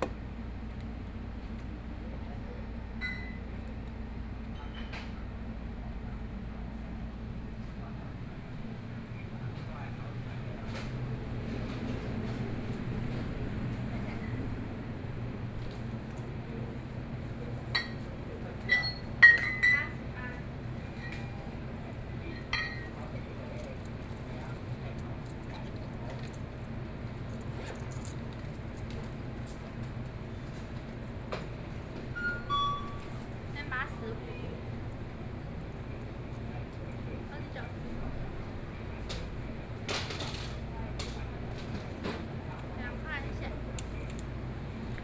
Daren Rd., Yancheng Dist. - soundwalk
Walking on the street, Traffic Sound, Various shops, Convenience Store